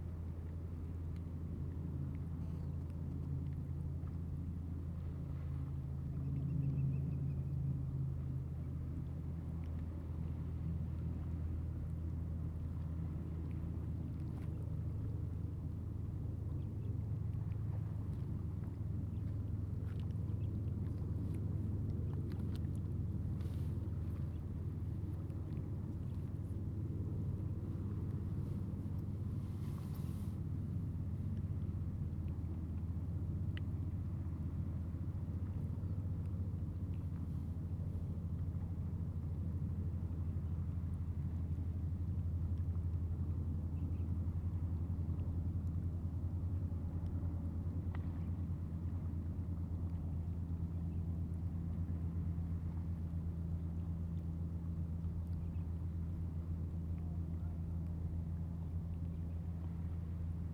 奎璧山地質公園, Penghu County - The distant sound of fishing vessels
The distant sound of fishing vessels
Zoom H2n MS+XY
21 October, 15:28